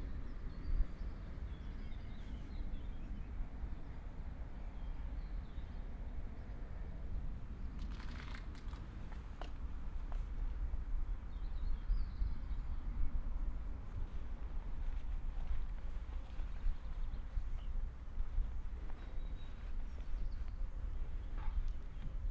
Marine Parade, Folkestone, Regno Unito - GG Folkestone beach1-LubainaHimidPavillon-190524-h15-20
Folkestone, UK